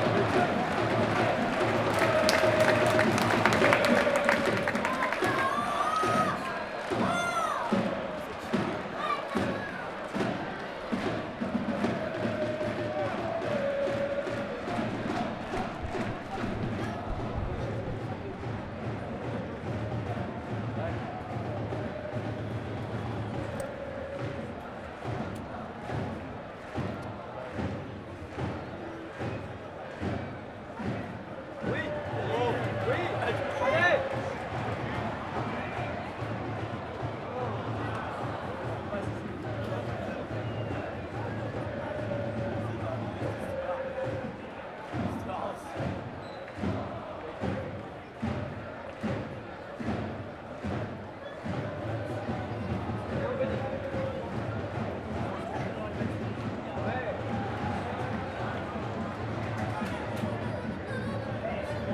Rue du Manoir, Guingamp, France - Ambiance au stade du Roudourou à Guingamp
Le stade du Roudouroù accueille la dernière rencontre du championnat D2, En Avant de Guingamp contre Le Havre. Enregistrement zoom H4.
Bretagne, France métropolitaine, France